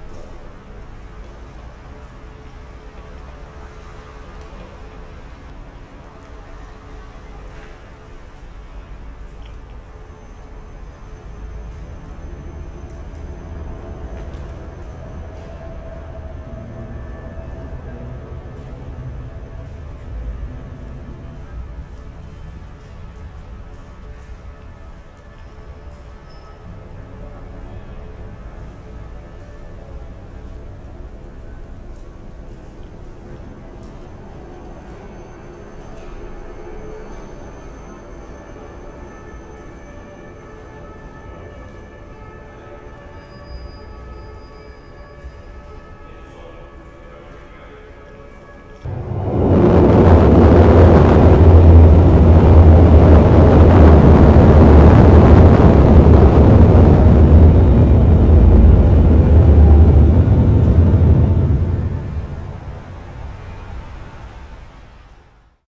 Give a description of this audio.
(Tunnel, Pipe structure, Monday, binaurals)